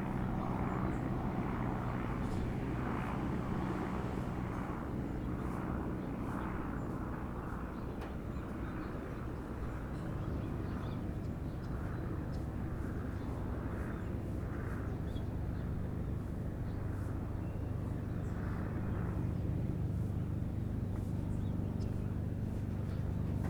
Alsace Corré, Réunion - 20140425 1126 1130 comparaison AS350B3 EC130 même trajectoire
Dans les montagnes de l'ÎLE DE LA RÉUNION, le tourisme par hélicoptère provoquant des nuisances sonores, une compagnie a fait des effort et utilise une "machine d'exception", "l'hélicoptère le plus silencieux du monde" dixit EC130B4 qui fait le même son que les EC130 au look un peu différent. Cette machine d'exception suivant justement un vieux bouzin du genre "Écureuil" des années 1990 vous pourrez apprécier ici l'incroyable réduction des nuisances sonores!!!
Sachez aussi que quand on mesure du son en dB, c'est bien souvent des dB(A), en réduisant de la mesure d'un facteur 40 (-16 dB le 100Hz) et d'un facteur 10.000 (-39dB) le 30Hz, vous comprendrez à quel point on est à coté de la plaque concernant une comparaison au sonomètre du bruit d'un hélicoptère, et d'autant plus que l'essentiel de la nuisance sont ces vibrations qui ébranlent tout!
La Réunion, France, 25 April 2014